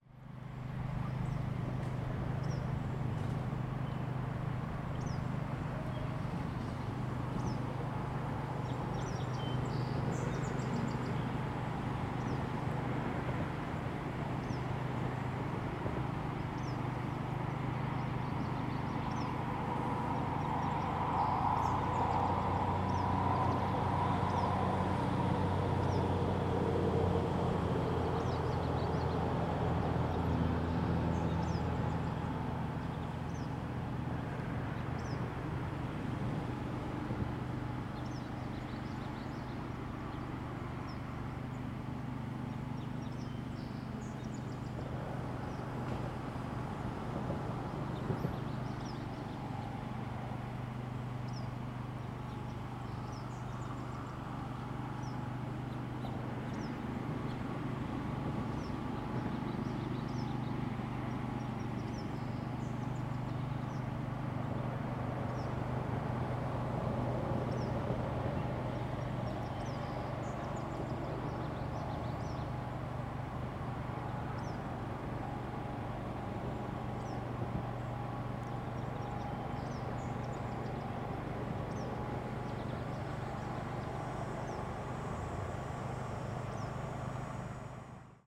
Black River, South Haven, Michigan, USA - Blue Star Highway
Ambient recording from packraft while floating the Black River approaching Blue Star Highway Bridge.
Michigan, United States, 20 July 2021, ~12:00